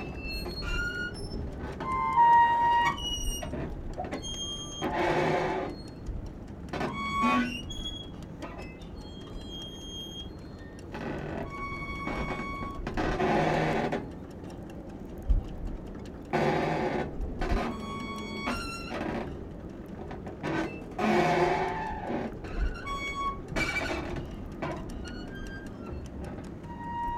Innerstaden, Visby, Sweden - Harbor squeak
Squeaking metal constructions in Visby harbor. Flag masts are ticking on background.